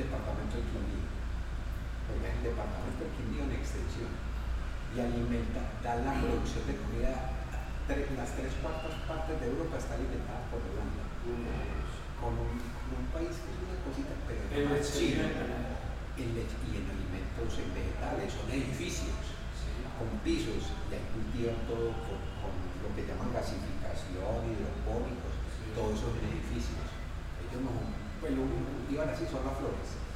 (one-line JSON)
{"title": "Cra., Medellín, Belén, Medellín, Antioquia, Colombia - Convesaciones inseperadas", "date": "2022-09-04 16:50:00", "description": "Este pasillo misterioso se encuentra mojado porque está cerca a una piscina, de allí se pueden\nanalizar las pisadas de aquellos que han osado en adentrarse en el recóndito y frío pasillo a las\n4:00 pm", "latitude": "6.24", "longitude": "-75.61", "altitude": "1570", "timezone": "America/Bogota"}